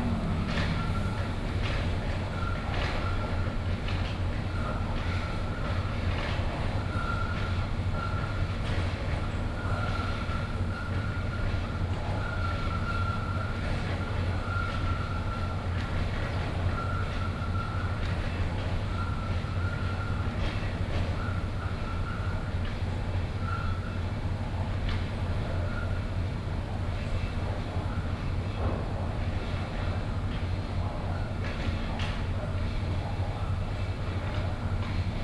Jiaxing Park, Da’an Dist., Taipei City - Sound of the construction site
Sound from construction site, Traffic Sound, in the park
Taipei City, Taiwan, 30 July